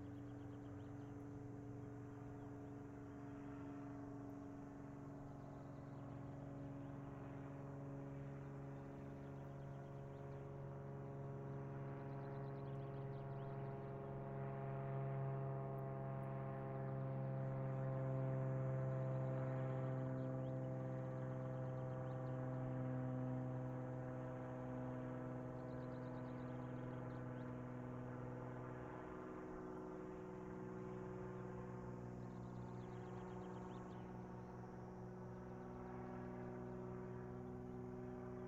Soboli, Rijeka, Croatia - birds, airplane, motorbike
Primorsko-Goranska županija, Hrvatska, 15 June 2013